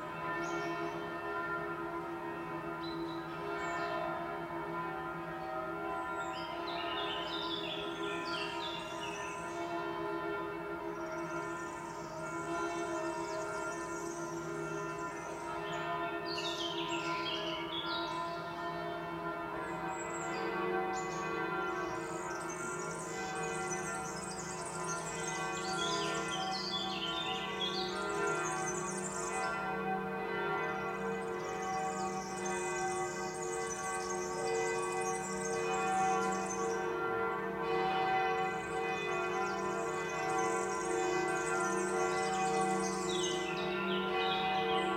{
  "title": "Linzer G., Salzburg, Österreich - Sebastianfriedhof",
  "date": "2021-07-07 12:01:00",
  "latitude": "47.80",
  "longitude": "13.05",
  "altitude": "434",
  "timezone": "Europe/Vienna"
}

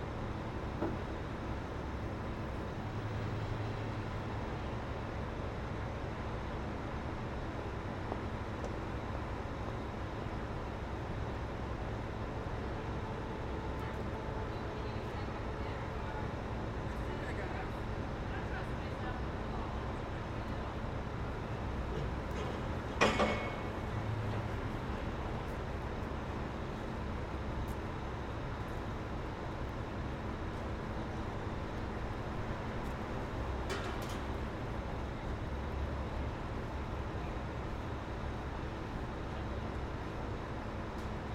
{
  "title": "E 46th St, New York, NY, USA - Demolition Truck",
  "date": "2022-04-01 17:30:00",
  "description": "Demolition truck destroying office furniture.",
  "latitude": "40.75",
  "longitude": "-73.97",
  "altitude": "14",
  "timezone": "America/New_York"
}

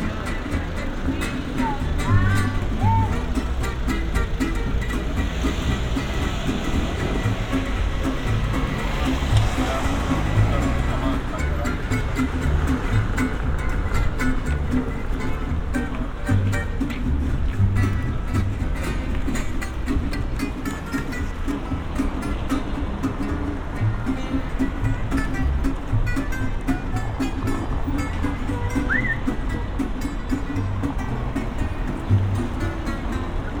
{
  "title": "Berlin: Vermessungspunkt Maybachufer / Bürknerstraße - Klangvermessung Kreuzkölln ::: 28.06.2013 ::: 18:35",
  "date": "2013-06-28 18:35:00",
  "latitude": "52.49",
  "longitude": "13.43",
  "altitude": "39",
  "timezone": "Europe/Berlin"
}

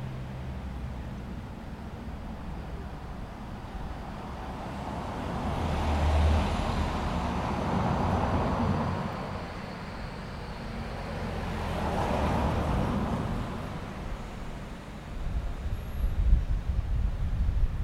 {
  "title": "Міст, Вінниця, Вінницька область, Україна - Alley12,7sound11soundunderthebridge",
  "date": "2020-06-27 12:24:00",
  "description": "Ukraine / Vinnytsia / project Alley 12,7 / sound #11 / sound under the bridge",
  "latitude": "49.23",
  "longitude": "28.47",
  "altitude": "231",
  "timezone": "Europe/Kiev"
}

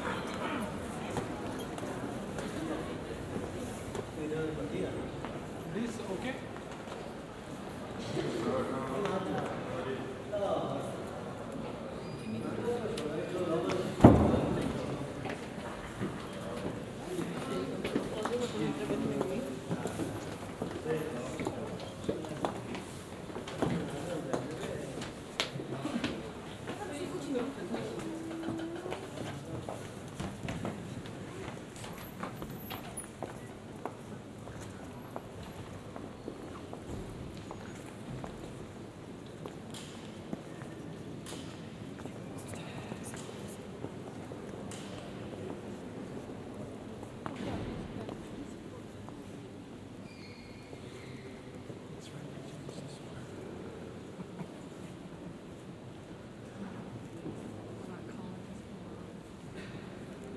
{
  "title": "San Marco, Wenecja, Włochy - Soundwalk in basilica ( binaural)",
  "date": "2016-12-12 12:10:00",
  "description": "Binaural soundwalk in Basilica di San Marco.\nOLYMPUS LS-100",
  "latitude": "45.43",
  "longitude": "12.34",
  "altitude": "12",
  "timezone": "GMT+1"
}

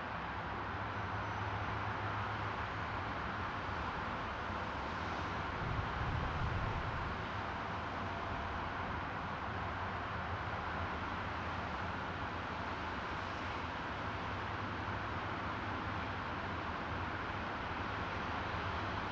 {
  "title": "Rijeka, Croatia, Highway - Highway 02 - door",
  "date": "2013-03-06 17:03:00",
  "latitude": "45.35",
  "longitude": "14.40",
  "altitude": "150",
  "timezone": "Europe/Zagreb"
}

